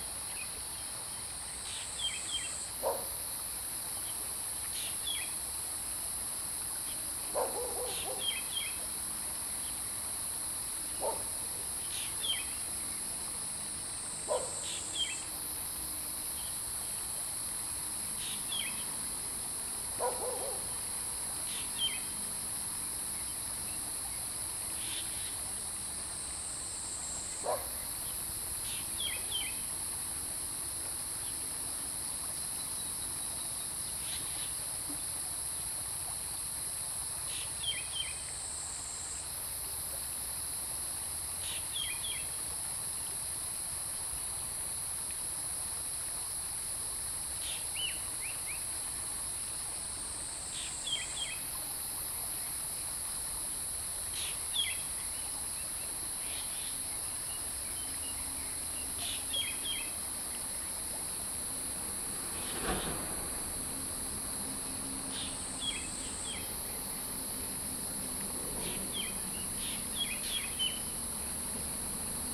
土角厝水上餐廳, 埔里鎮桃米里 - Bird and Stream
Bird and Stream, Bird calls, Dogs barking
Zoom H2n MS+XY
Puli Township, 水上巷, 12 June 2015, 05:58